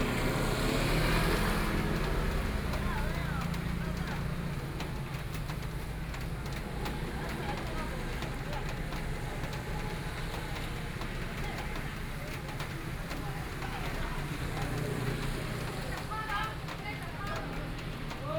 Kangle Rd., Yilan City - Selling vegetables sound
Rainy Day, At the crossroads, There are three vegetable vendors selling their voice, Traffic Noise, Binaural recordings, Zoom H4n+ Soundman OKM II